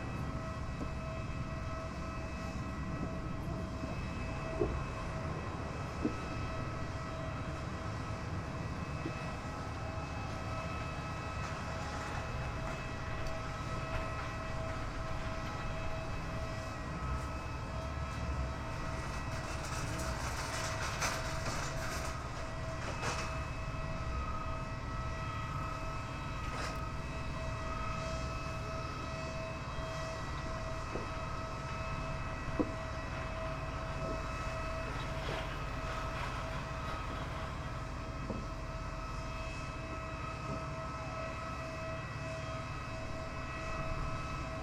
Madeira, airport - observation deck
small jet plane idling on the runaway, making so much noise with its jet engines it basically drowns all other surrounding sounds. passengers on the observation deck are not able to hear the announcements. a TAP flight will land any minute and there is a lot of commotion on the airfield getting ready for handling the incoming flight. The plane lands at some point but still the small jet plane is louder. You can hear the difference after it takes off around 5th minute of the recording.